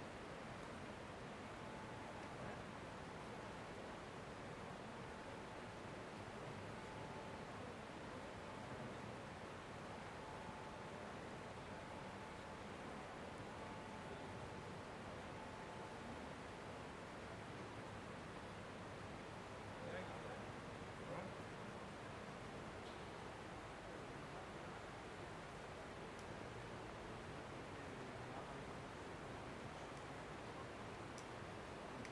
Τα Παπάκια, Πινδάρου, Ξάνθη, Ελλάδα - Park Nisaki/ Πάρκο Νησάκι- 21:00
River flow, men discussing, people talking distant, cellphone ringing, light traffic.